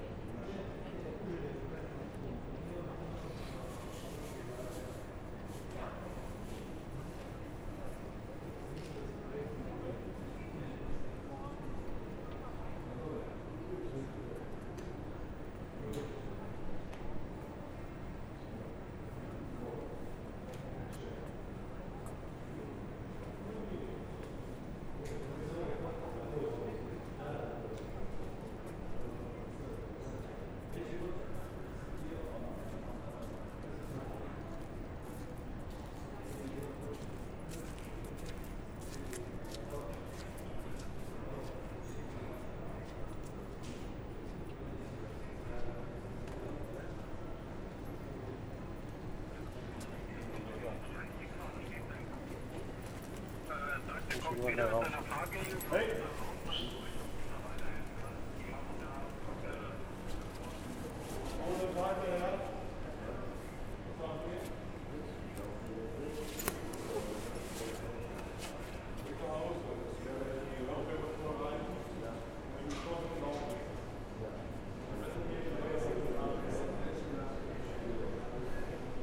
Nearly no one is waiting, but at least some are in this empty lobby of the airport, close to the entrance of Terminal 1, B. A man is asking for money, he asked me already at the main train station in Frankfurt and at the train station of the airport (hear there), now the securities recognise him and tell him to leave. Arount 3:40. They are whisteling, "Guten Tag der Herr, was machen Sie" "Nix".
Hessen, Deutschland, 24 April 2020, ~18:00